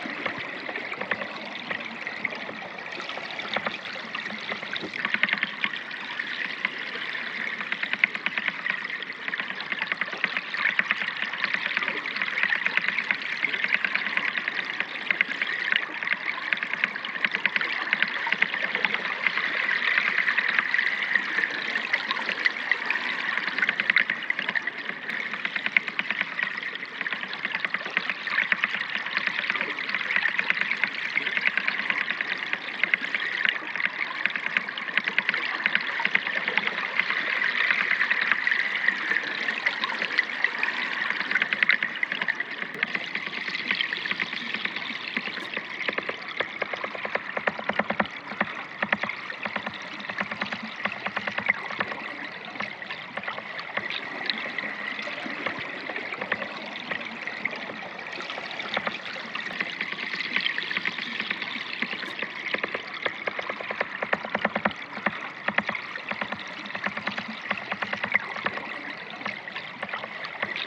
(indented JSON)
{
  "title": "Trnovo Ob Soci - Underwater River Sounds (Strange Unexpected Kicks)",
  "date": "2020-07-05 15:29:00",
  "description": "Doing some underwater sound recordings and unexpected heard some strange kicks, when previewing the sounds underwater. Nice underwater sounds were recorded with kicks. No idea what it was kicking to the stones or wooden poles underwater.\nTASCAM DR100-MKIII\nAQ H2a XLR Hydrophone\nIt was a hot sunny day in the summer, but close to the river the temperature changed on my skin because of the river temperature. Nice emerald Soca river!",
  "latitude": "46.26",
  "longitude": "13.59",
  "altitude": "220",
  "timezone": "Europe/Ljubljana"
}